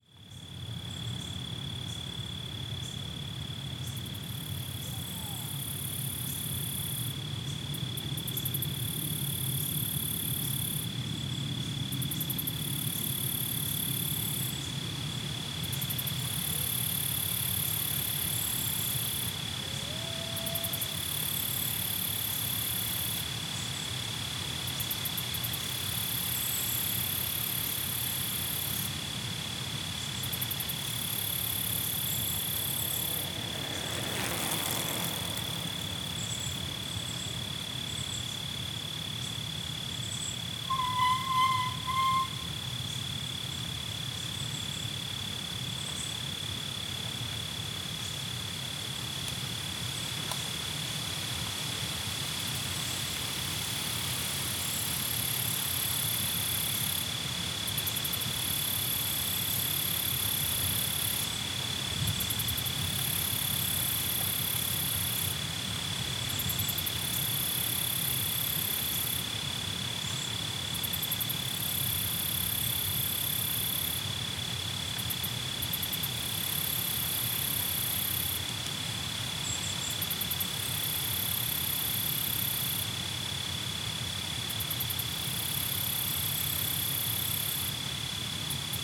Toronto, ON, Canada - Crickets and Susurration
Side of roadway to lighthouse in Tommy Thompson Park. Interesting interplay between the sounds of crickets and the susurration of leaves caused by the wind. A few cyclists ride past, with the first one stopping (squeal of brakes) to light a "cigarette" before continuing.
Golden Horseshoe, Ontario, Canada